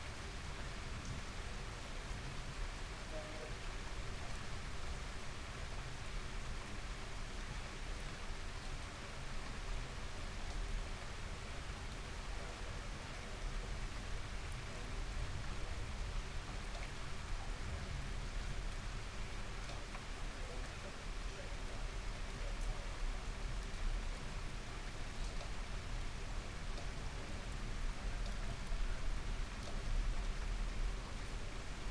Recorded from an attic room in a terraced house using LOM microphones
England, United Kingdom